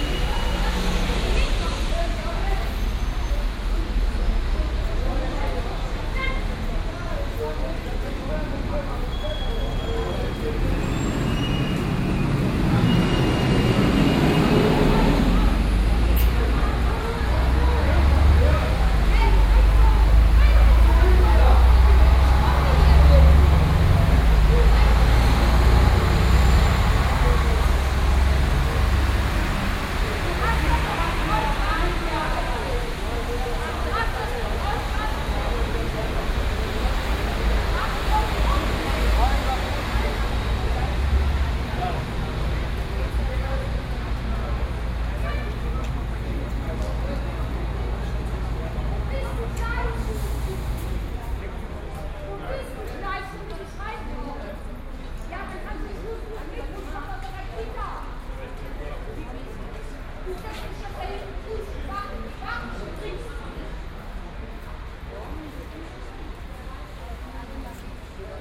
Koblenz, main station, Deutschland - Koblenz Hbf
Arguments at the bus station in front of the main station Koblenz. Binaural recording.